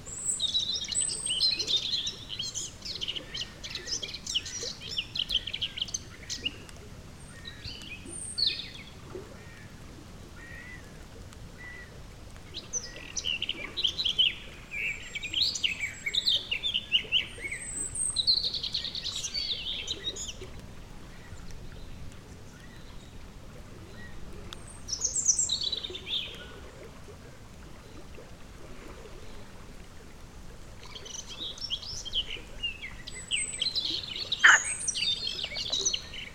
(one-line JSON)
{"title": "La Fuentona, Soria, Spain - Paisagem sonora de La Fuentona - La Fuentona Soundscape", "date": "2013-04-16 15:00:00", "description": "Paisagem sonora de La Fuentona em Soria, Espanha. Mapa Sonoro do Rio Douro. Soundscape of La Fuentona in Soria, Spain. Douro river Sound Map.", "latitude": "41.74", "longitude": "-2.87", "altitude": "1044", "timezone": "Europe/Madrid"}